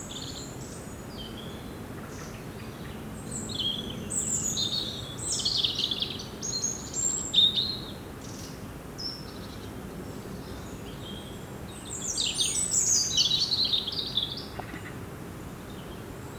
Poses, France - Blackbird
A blackbird is singing near a beautiful pathway.